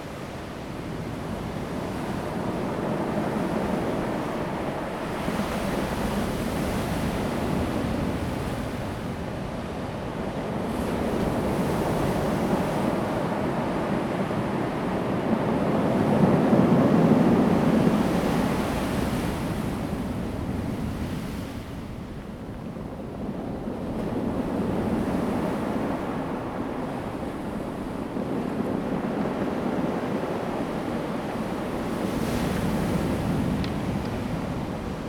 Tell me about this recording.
Sound of the waves, wind, Wave impact produces rolling stones, Zoom H2n MS+XY